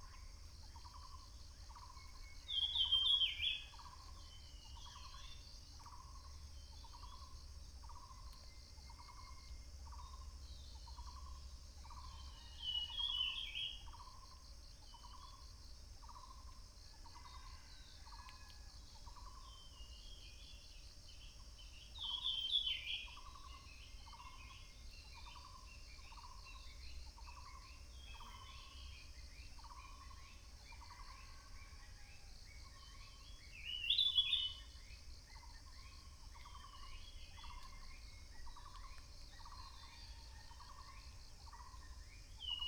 顏氏牧場, 埔里鎮桃米里 - Bird sounds
Bird sounds
Binaural recordings
Sony PCM D100+ Soundman OKM II
Puli Township, 水上巷28號, 28 April